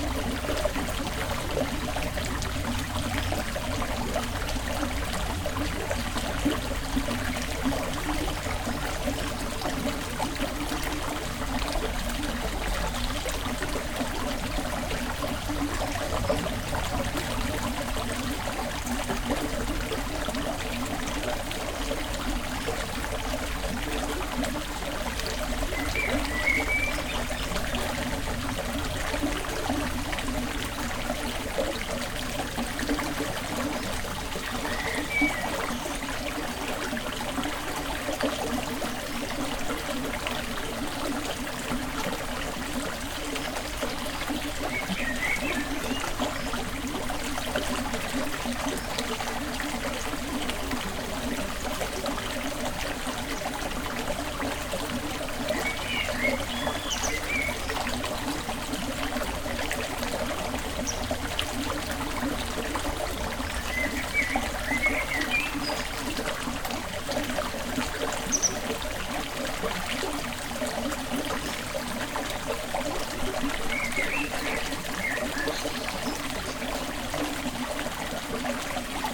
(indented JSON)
{
  "title": "Veuvey-sur-Ouche, France - Veuvey mill",
  "date": "2017-06-15 12:44:00",
  "description": "In the Veuvey mill, water is flowing quietly. It's a sunny and pleasant day near this small river.",
  "latitude": "47.19",
  "longitude": "4.71",
  "altitude": "318",
  "timezone": "Europe/Paris"
}